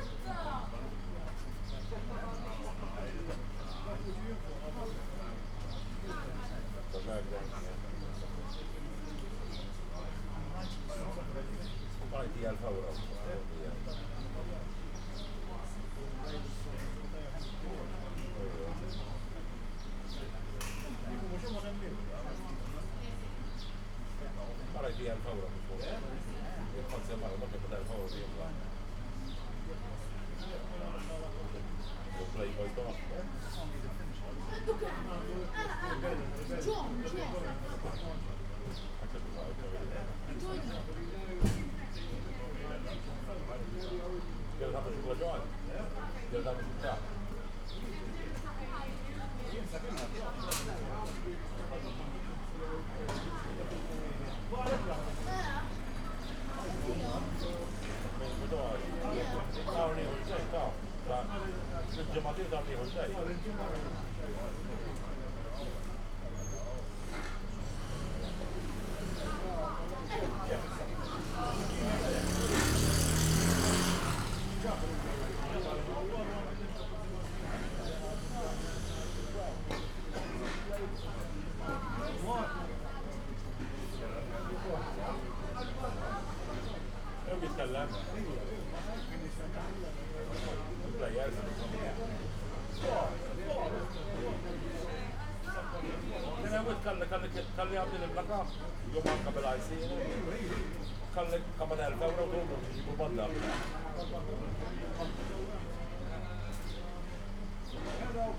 Pjazza Indipendenza, Victoria, Malta - street cafe ambience
street cafe, Pjazza Indipendenza, Victoria, Malta, people talking, a truck collects glass for recycling, quite rare in Malta. But this is Gozo.
(SD702, DPA4060)
4 April, ~16:00